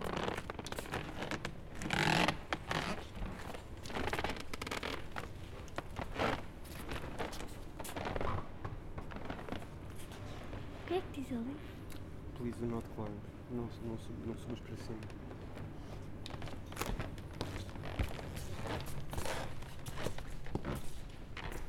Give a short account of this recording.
cardboard being walked on, people talking and moving, snoring sounds from video projection